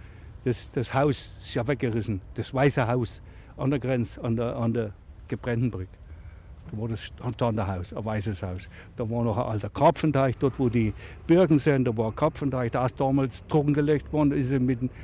Produktion: Deutschlandradio Kultur/Norddeutscher Rundfunk 2009